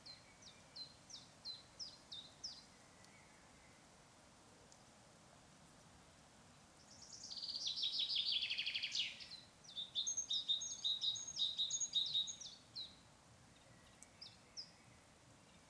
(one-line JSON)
{"title": "Aukštaitija National Park, Lithuania, tourists and wilderness", "date": "2012-05-26 17:10:00", "description": "so-called \"tourists\" on the other shore of the lake playing loud music...", "latitude": "55.46", "longitude": "25.97", "altitude": "150", "timezone": "Europe/Vilnius"}